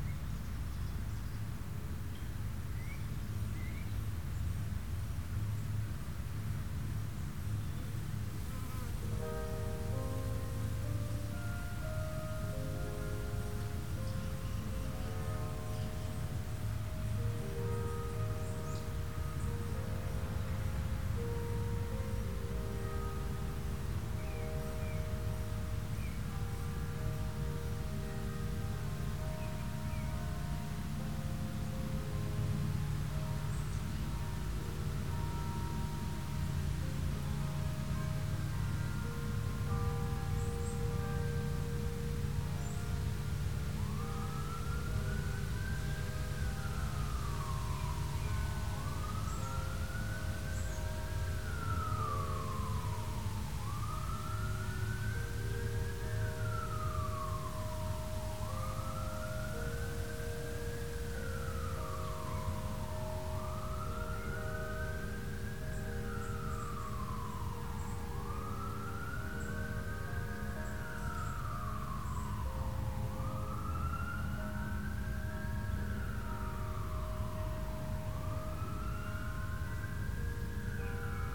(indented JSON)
{
  "title": "Romeo, MI, USA - Romeo Churchbells & Field Ambiance",
  "date": "2015-07-22 15:15:00",
  "description": "From a picturesque Summer afternoon in a Romeo, MI backyard comes this recording of Churchbell songs and field ambiance. I used a CROWN SASS-P stereo mic with a large wind screen and low cut, and then ran that into my Tascam DR-07 recorder. You can get a really nice small town in the Summer vibe, with layers of crickets merging with the bells echoing from about a block away.",
  "latitude": "42.81",
  "longitude": "-83.02",
  "altitude": "255",
  "timezone": "America/Detroit"
}